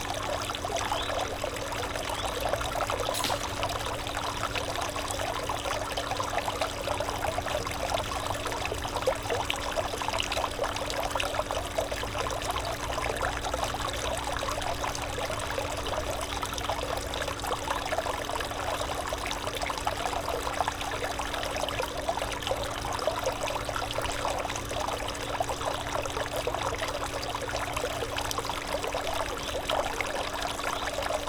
Funkhaus Nalepastr., Berlin - fountain
fountain at Funkhaus Nalepastr.
(SD702 Audio Technica BP4025)